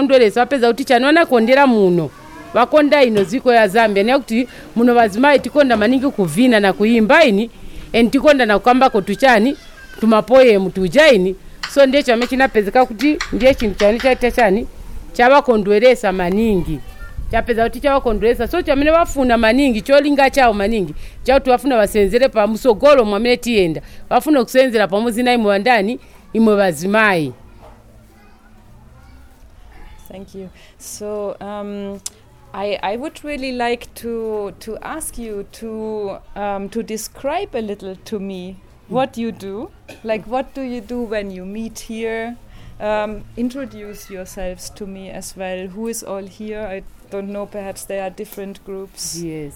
Chipata, Lusaka, Zambia - Ad hoc radio workshop in the yard...
...i took out my recorder when the women introduced us singing... and a radio workshop began...
30 November 2012, 10:40